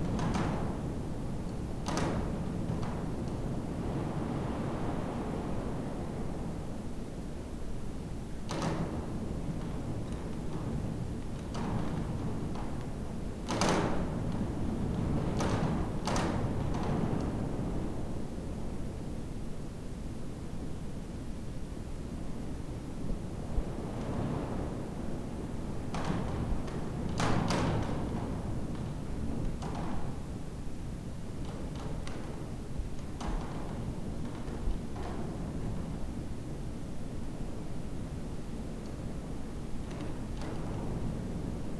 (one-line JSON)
{"title": "schaprode: st. johanneskirche - the city, the country & me: saint johns church", "date": "2013-03-08 14:58:00", "description": "confessional box rattling in draft (during storm)\nthe city, the country & me: march 8, 2013", "latitude": "54.51", "longitude": "13.17", "altitude": "4", "timezone": "Europe/Berlin"}